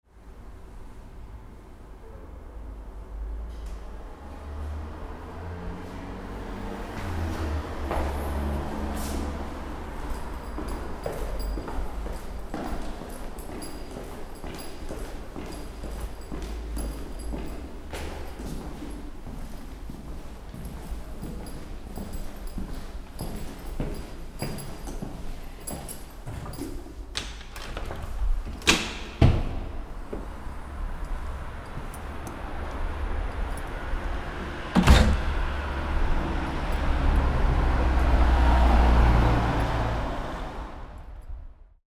Maribor, Slovenia, Koroška c. - Stairway walk, exiting the house
A short stairway walk on Koroška street. Handheld stereo recording (Zoom H4).